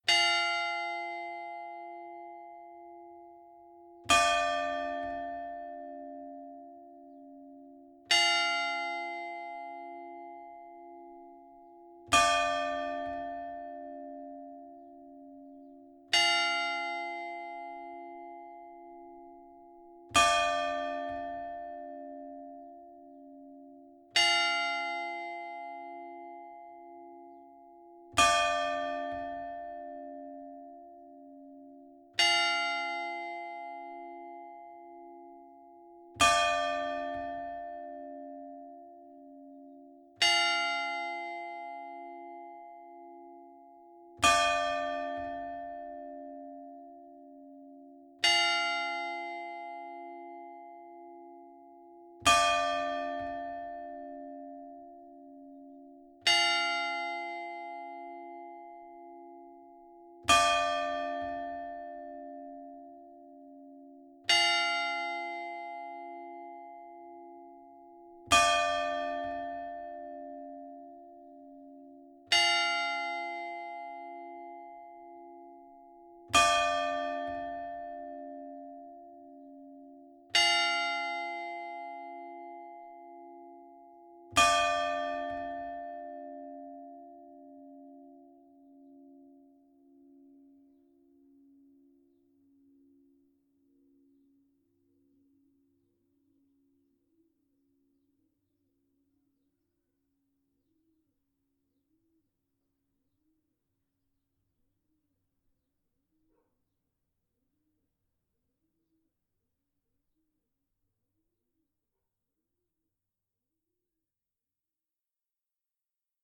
Escautpont US, Escautpont, France - Escautpont (Nord) - église St-Armand
Escautpont (Nord)
église St-Armand
Le Glas